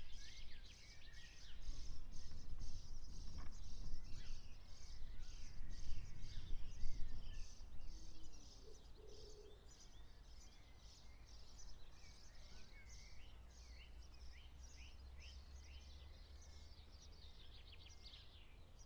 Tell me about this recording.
white rose classic rally run by malton car club ... xlr sass on tripod to zoom h5 ... extended edited recording ... lots of traffic ... m'bikes ... lorry ... farm traffic ... cyclists ... and some of the seventy entrants from the car rally ... lots of waving ... bird song ... calls ... house sparrow ... blackbird ... swallow ...